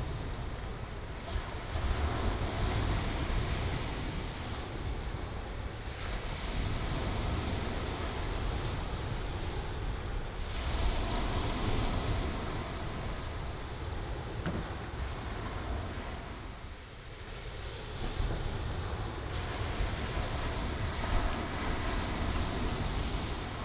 Baishawan Beach, New Taipei City - Sound of the waves
at the seaside, Sound of the waves
17 April 2016, 07:03